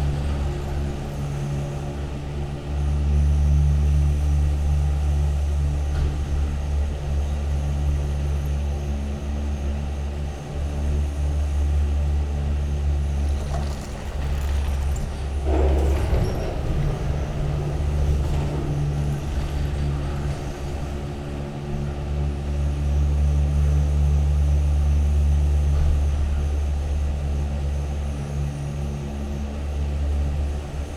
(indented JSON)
{
  "title": "Maribor, Pohorje ropeway - ground station",
  "date": "2012-05-28 12:20:00",
  "description": "sound of the Pohorje ropeway ground station, the whole structure is resonating. most arriving cabins are empty, the departing ones are crowded by downhill bikers.\n(SD702, DPA4060)",
  "latitude": "46.53",
  "longitude": "15.60",
  "altitude": "329",
  "timezone": "Europe/Ljubljana"
}